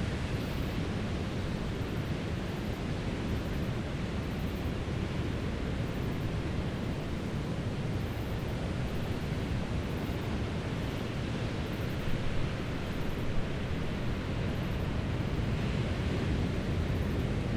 I was seating in complete darkness between some Chagual plants (puya chilensis) with a ZOOM H4N recording the sound of the sea waves flushing between big rocks. There were some insects around, maybe some kind of crickets. The view of the milky-way was astonishing.